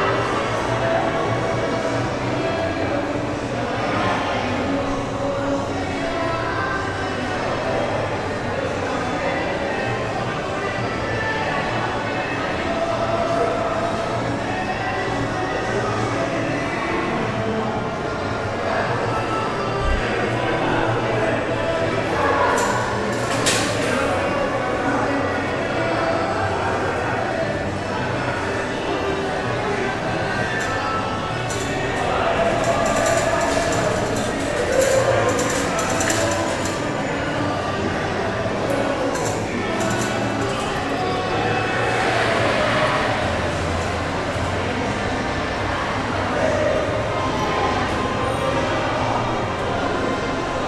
Cl., Medellín, Belén, Medellín, Antioquia, Colombia - Mall Alpes
Se escucha personas hablando, el sonido de bus, el sonido de cosas siendo arrastradas, el viento, música.